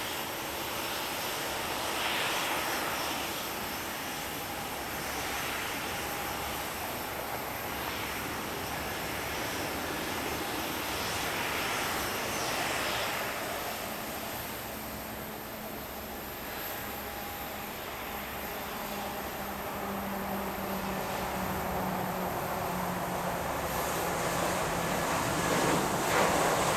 MSP Airport Terminal 1 Ramp - Minneapolis/St Paul International Airport Runway 30L Operations
Landings and takeoffs from Runway 30L at Minneapolis/St Paul International Airport recorded from the top of Terminal 1 Parking ramp. The sounds of the airport ramp and the passenger vehicle traffic exiting the terminal can also be heard.
Minnesota, United States, February 2022